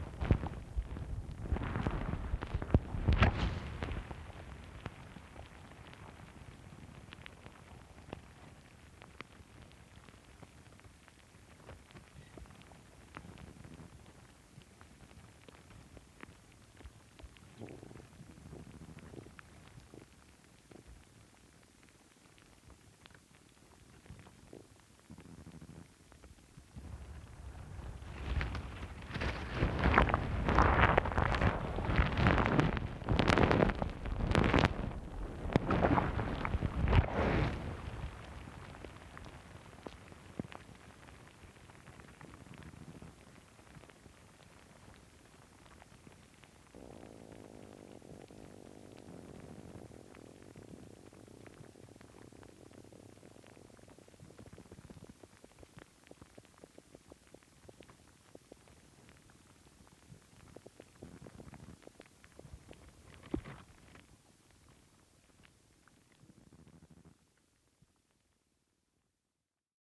Glasson Moss, Cumbria, UK - Sphagnum Moss
Hydrophones in Sphagnum Moss
Glasson Moss Nature Reserve
24 April 2013, ~15:00